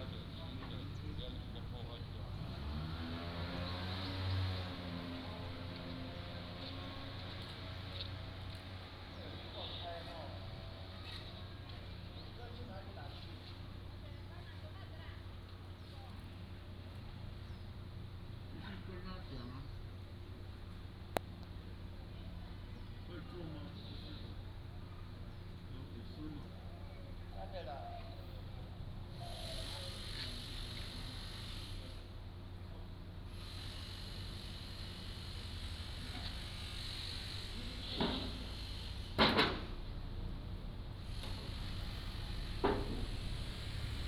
椰油村, Koto island - Small tribes
Small tribes, Traffic Sound, Yang calls
Taitung County, Taiwan, October 2014